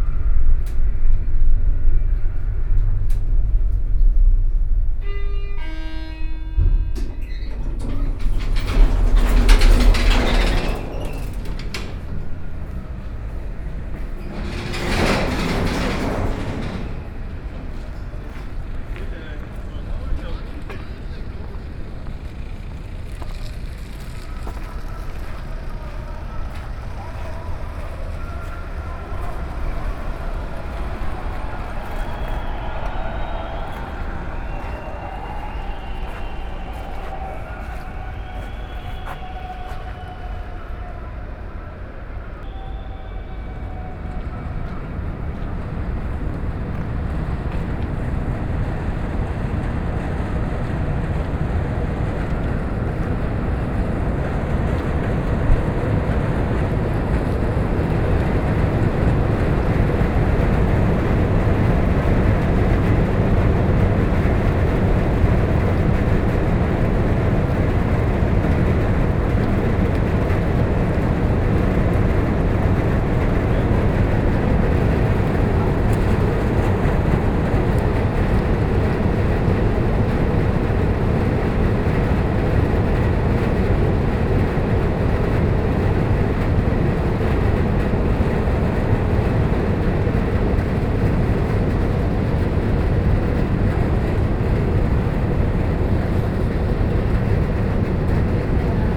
A soundwalk in the demonstration, then up on the roof of the parking 58, air conditionning system and back in the street.